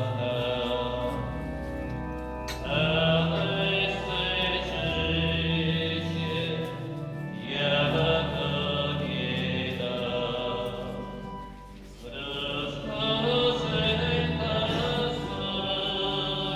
u Stacha Church, Przemyśl, Poland - (76) Christmas mass
Recording of Christmas mass service on the second day of Christmas.
recording made on my request but not by myself.
Recorded with Soundman OKM on Sony PCM D100